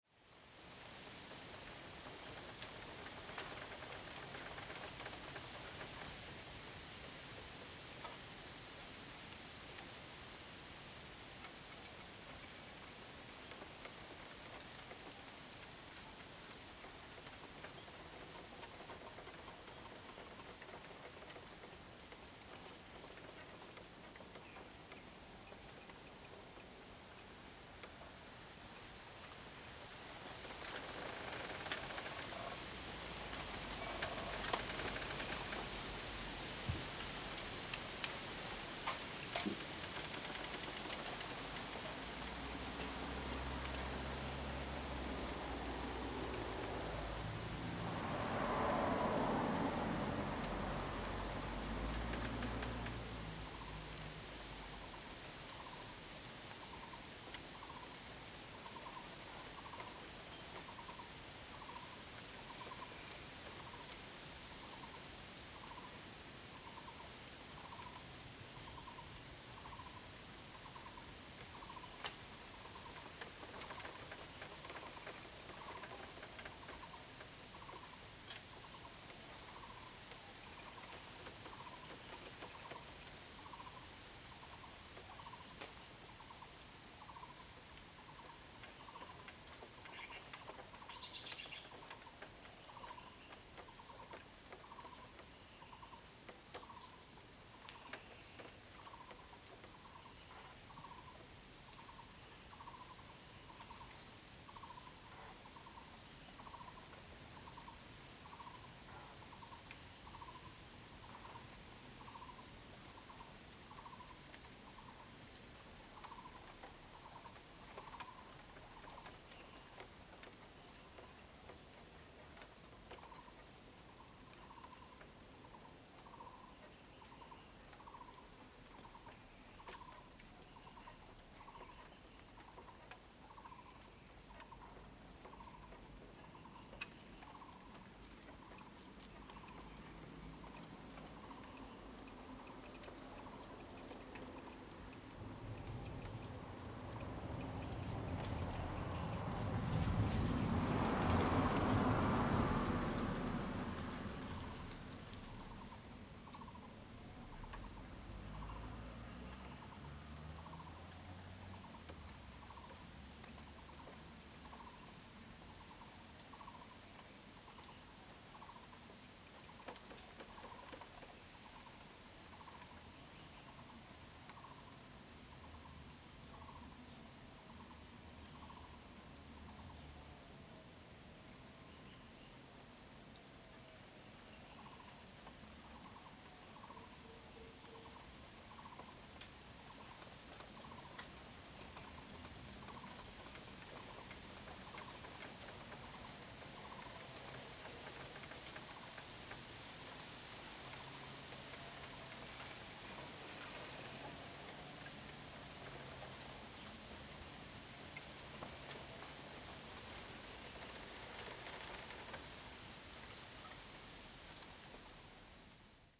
種瓜路, 桃米里, 埔里鎮 - In the bamboo forest edge
In the bamboo forest edge
Zoom H2n MS+XY